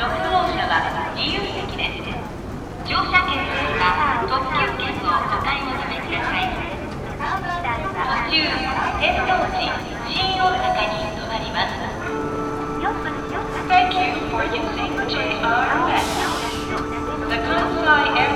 Kansai International Airport, Osaka - train station voices
Osaka Prefecture, Japan